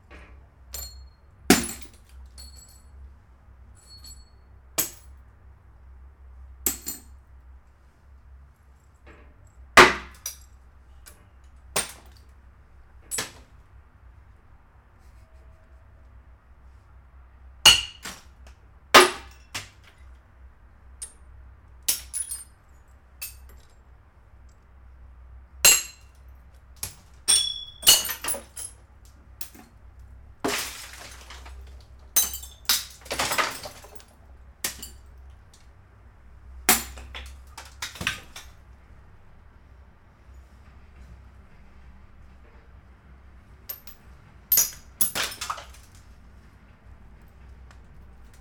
Field recording of the urban ecology collaborative project with John Grzinich organized by the Museum of Art in Lodz, S?awas and John experimenting with pieces of broken glass
4 April, 16:00